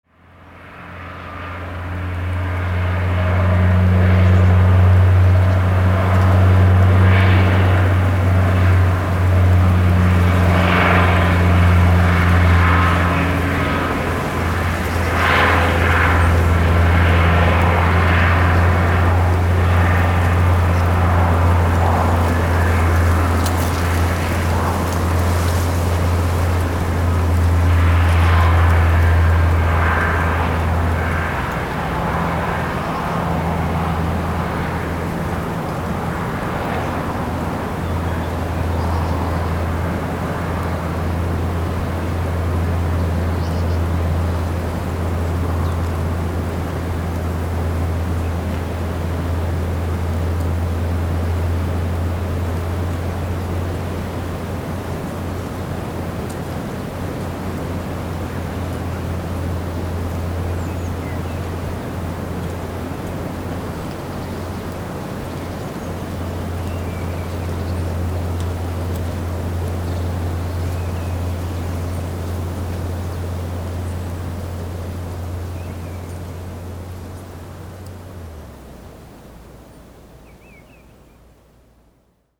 Gabre, France - Little truck
Little truck on the road, Zoom H6
March 2018